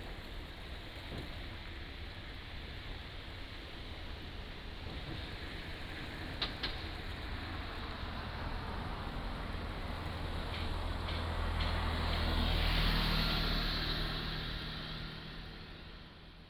福澳港, Nangan Township - In the next port
In the next port, Traffic Sound, There is the sound of distant construction
福建省 (Fujian), Mainland - Taiwan Border, 14 October 2014